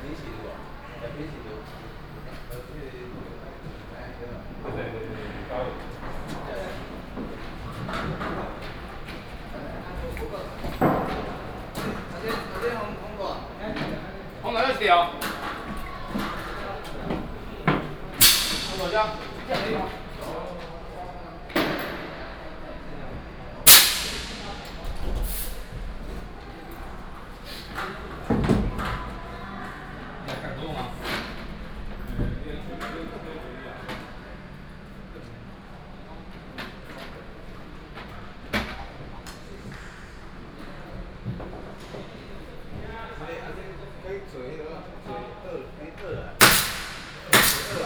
新北市政府, New Taipei City, Taiwan - Exhibition site construction
Exhibition site construction